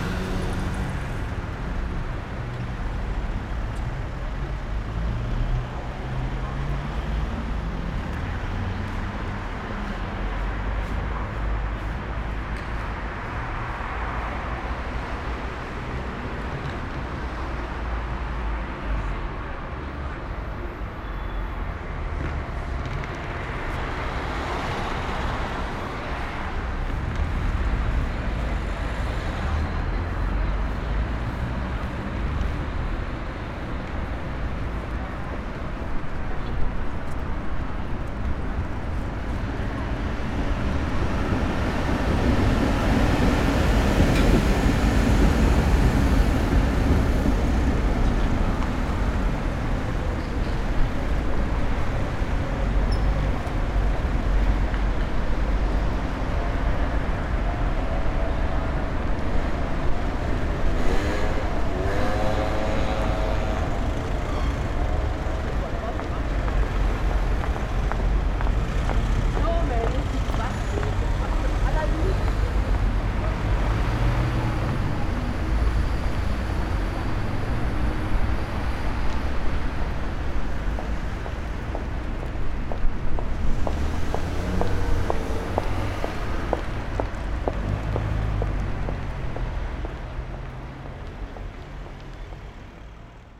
Brussels, Chaussée de Charleroi
Waiting for a police siren, but there were none.
Saint-Gilles, Belgium, November 2011